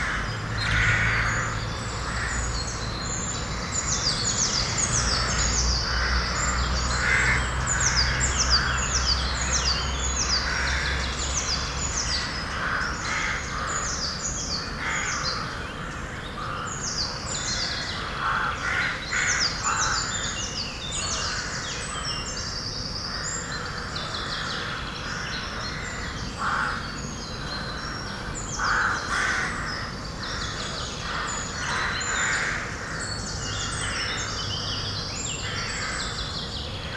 Hautmont, France - Unhappy crows
Into the abandoned and literally pulverized Haumont bunker, a large colony of crows keeps an eye on the babies on the nests. Birds are very unhappy I'm here. During a small storm, with a very unfriendly neighborhood, a completely destroyed bunker and all this crows, I just find the place oppressive.